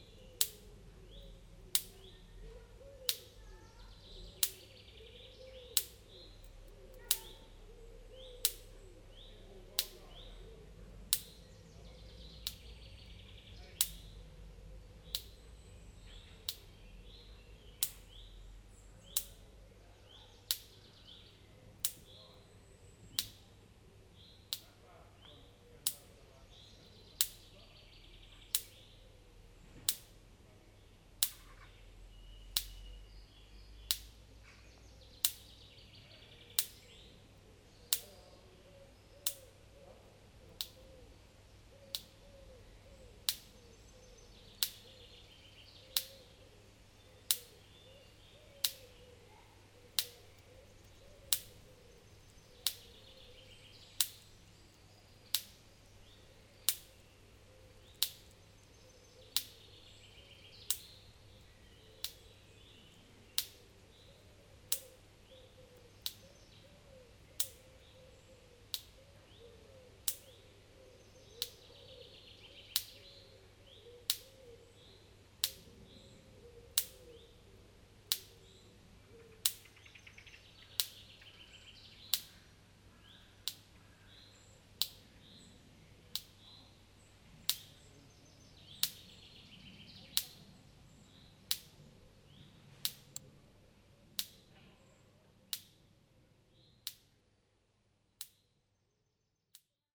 Genappe, Belgique - Electrical fence
During a walk in Genappe, I noticed a small problem in an electrical fence. A short circuit makes tac tac tac...